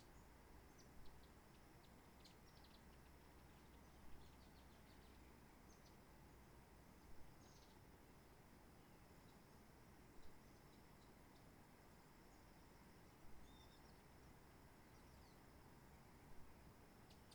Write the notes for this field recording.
Les oiseaux ont encore l'habitude de ne pas être actifs aux horaires habituellement occupé par les hélicoptères.